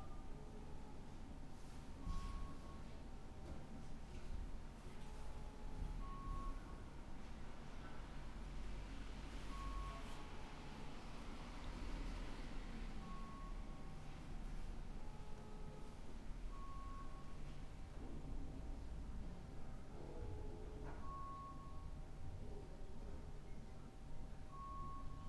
Alte City Pension, Rankestraße - morning staircase activity
tenants and hotel guests walking up and down the stairs, leaving the building for breakfast. someone still using the dail-up connection. rustle of winder jackets. so dominant and present yet hardly noticeable in the whole set of everyday sounds. one of many sounds we filter out i guess.
9 December, 08:36